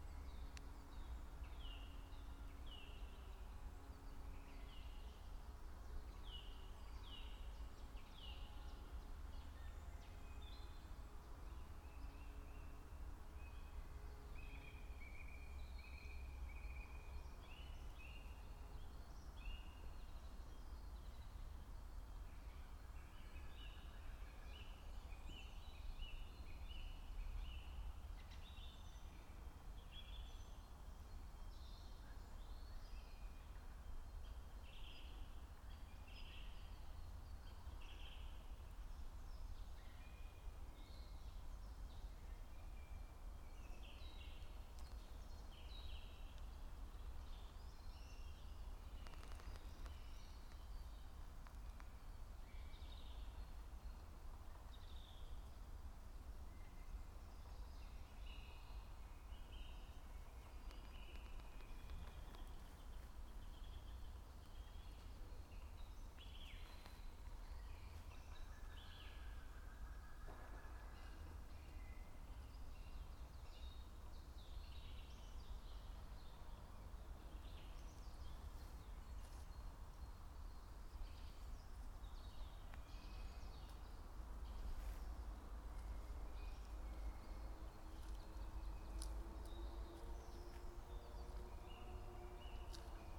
{"title": "dale, Piramida, Slovenia - quiet walk", "date": "2013-03-11 16:45:00", "description": "birds, small sounds, quiet steps of unexpected passer-by", "latitude": "46.58", "longitude": "15.65", "altitude": "376", "timezone": "Europe/Ljubljana"}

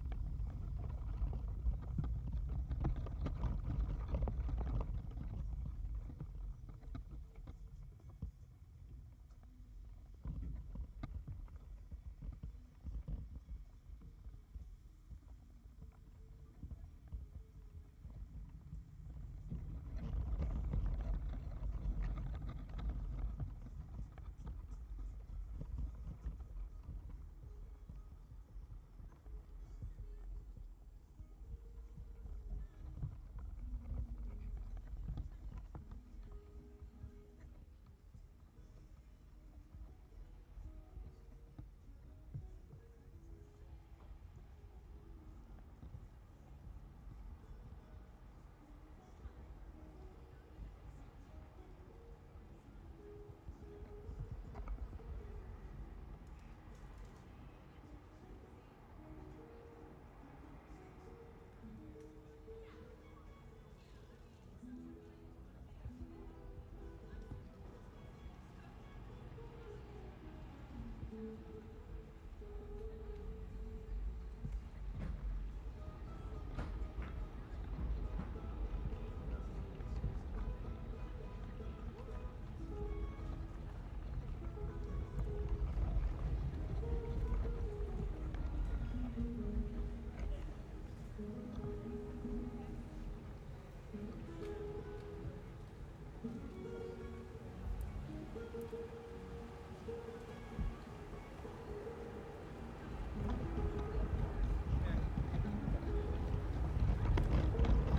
{"title": "Parallel sonic worlds: Birchtrees & Tate Modern riverside, Bankside, London, UK - Parallel sonic worlds: Birchtrees & Tate Modern riverside", "date": "2022-05-16 13:44:00", "description": "The bright green birch leaves looked beautiful as they shimmered in the wind on this sunny day. The sound is quiet but easy to hear. The movement also creates a vibration in the wood of the tree. This track uses a combination of normal and contact mics to crossfade from the outside atmosphere, where a distant guitarist entertains in front of the Tate Gallery, to the internal fluttering as picked up by a contact mic on the tree itself. When the wind drops the vibration in the wood disappears too.", "latitude": "51.51", "longitude": "-0.10", "altitude": "3", "timezone": "Europe/London"}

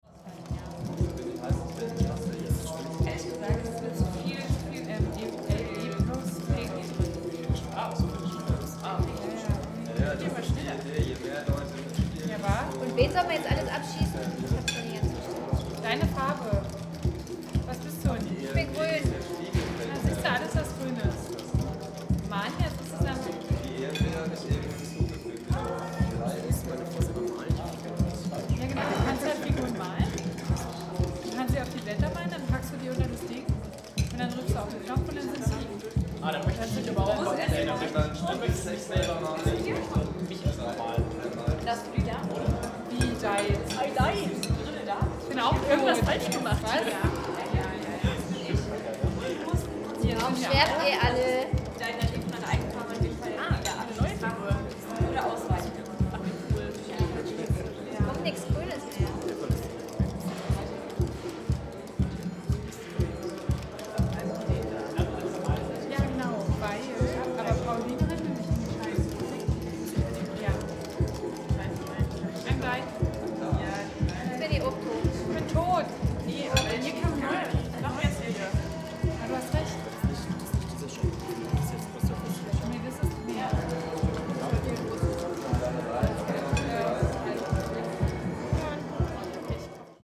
{"title": "club transmediale berlin - ctm video gamerz", "date": "2010-02-02 18:45:00", "description": "video game installation at club transmediale. 5 gamers clicking", "latitude": "52.52", "longitude": "13.41", "altitude": "53", "timezone": "Europe/Berlin"}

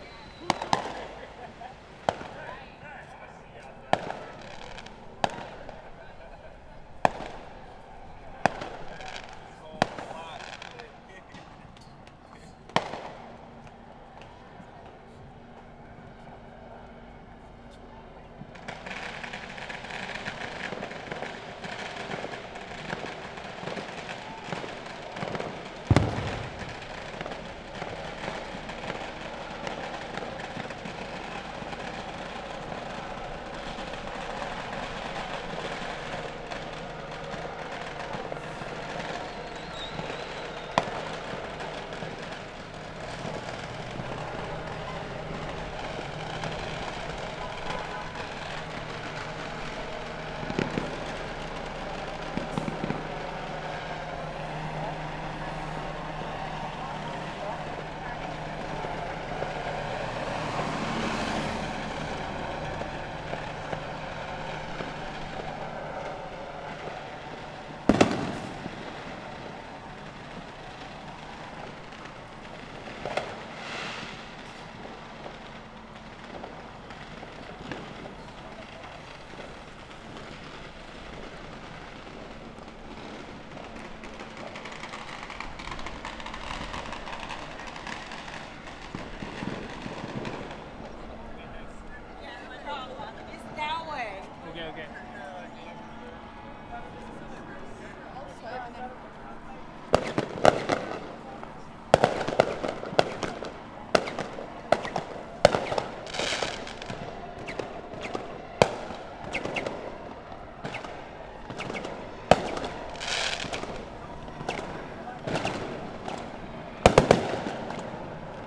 {"title": "Wyckoff Ave, Brooklyn, NY, USA - Ridgewood/Bushwick 4th of July Celebration", "date": "2019-07-04 21:20:00", "description": "Ridgewood/Bushwick 4th of July Celebration.", "latitude": "40.70", "longitude": "-73.91", "altitude": "21", "timezone": "America/New_York"}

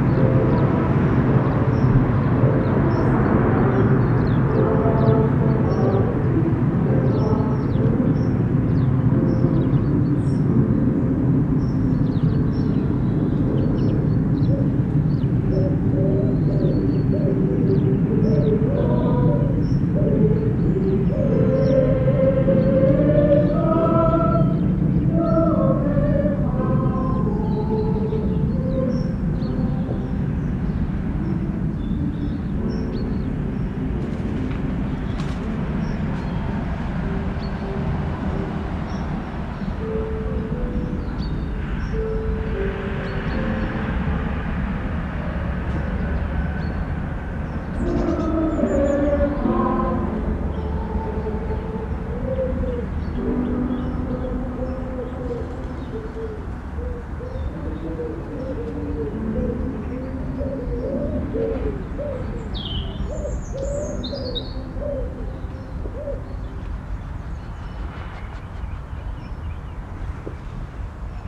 {"title": "StLeonard's Church, Woodcote, Oxfordshire, UK - Congregational singing and ambience", "date": "2013-10-06 10:45:00", "description": "Nestled on the edge of the Chiltern Hills in Woodcote, South Oxfordshire is St Leonard's Church. It is flanked by the Reading and South Stoke Roads, the more distant A4074 and the next door farm. It is the ebb and flow of natural and man-made sounds enveloping the congregational singing that made this section of the recording stand out.", "latitude": "51.53", "longitude": "-1.07", "altitude": "162", "timezone": "Europe/London"}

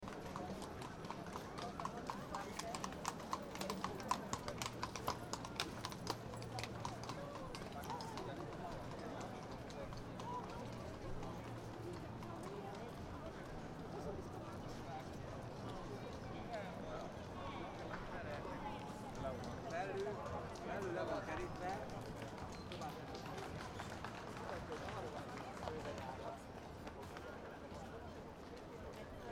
{"title": "Stare Miasto, Kraków, Poland - Damen und Herren", "date": "2011-08-03 20:25:00", "latitude": "50.06", "longitude": "19.94", "altitude": "216", "timezone": "Europe/Warsaw"}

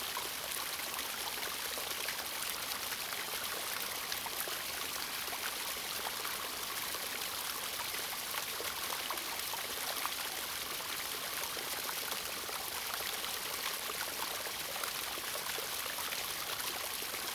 {"title": "種瓜路, 埔里鎮Puli Township - Farmland waterways", "date": "2016-04-28 09:37:00", "description": "Farmland waterways, Irrigation channels\nZoom H2n MS+XY", "latitude": "23.95", "longitude": "120.90", "altitude": "520", "timezone": "Asia/Taipei"}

